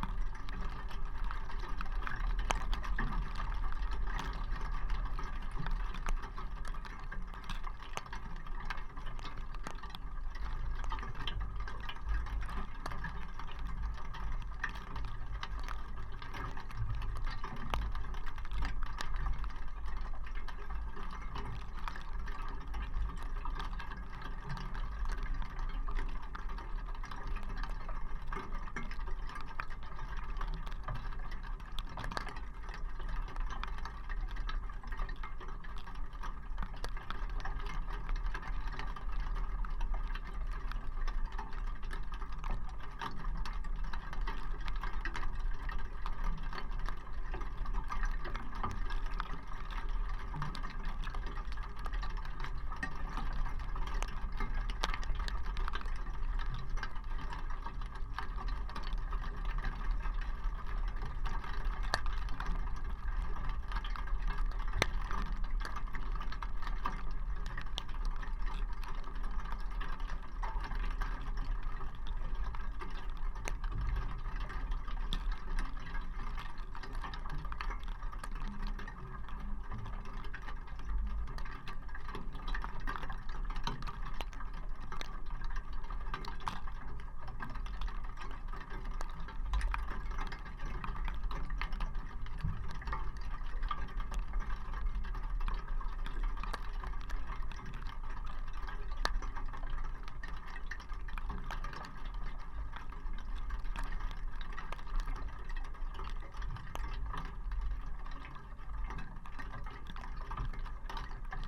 Utena, Lithuania, snowflakes on barbed wire
abandoned soviet army era millitary territory. remaining pieces of barbed wire. contact microphones
1 December 2018